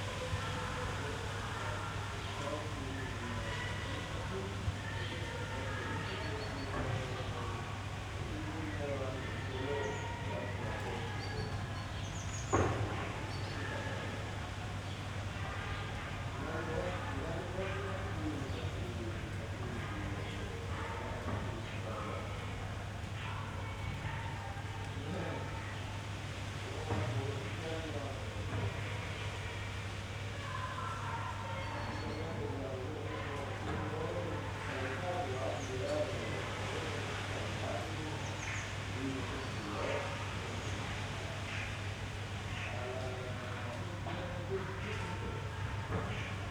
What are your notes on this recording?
Sunday, voices in the backyard, wind.